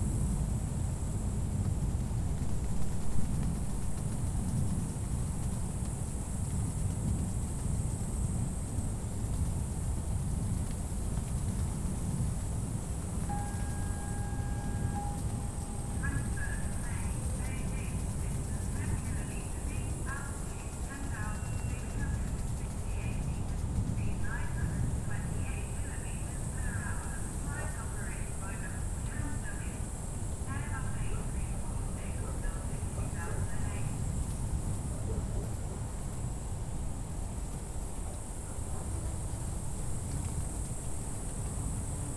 Ojaveere, Neeruti, Valga maakond, Eesti - Ambience @ Maajaam after the Wild Bits festival
Recorded inside a tent near Maajaam. Insects, birds, distant car sounds and airplanes. The voice is from Timo Toots's installation "Flight Announcer". Tascam DR-100mkIII with built in unidirectional microphones.